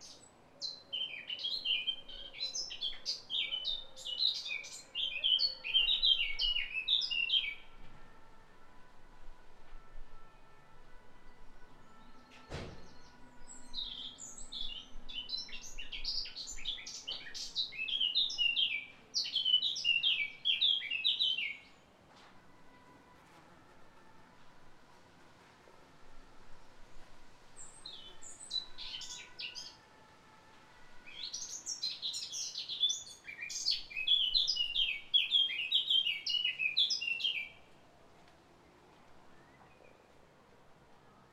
Bird singing in my garden, Caen, France, Zoom H6